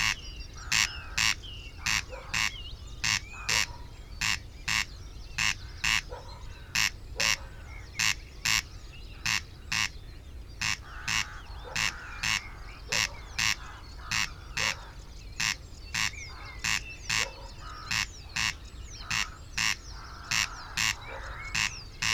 Unnamed Road, Isle of Islay, UK - corn crake ... crex ... crex ... etc ...
Corncrake soundscape ... RSPB Loch Gruinart ... omni mics in a SASS through a pre-amp ... calls and songs from ... sedge warbler ... blackbird ... reed bunting ... song thrush ... cuckoo ... rook ... wren ... lapwing ... greylag geese ... moorhen ... gadwall ... crow ... jackdaw ... and a dog ... not edited or filtered ...